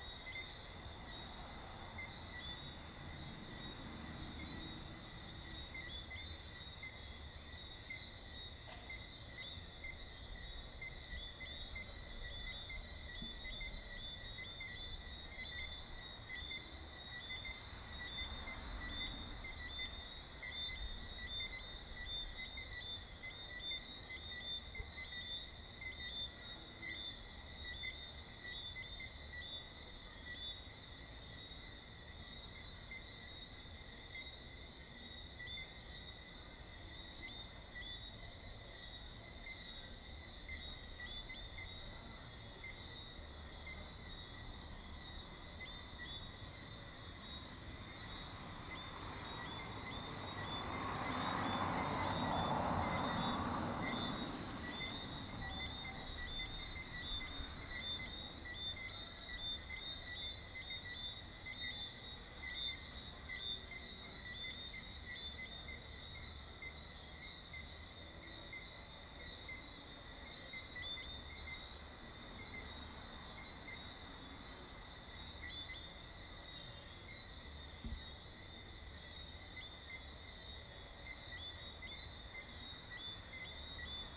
The sounds of Saturday night at Herdmanston Lodge on a quiet(ish) street in Georgetown, Guyana. You can hear an ensemble of crickets mixing with bassy sound systems, distant car horns, and the general buzz of distant activity.
Demerara-Mahaica Region, Guyana, 18 May 2013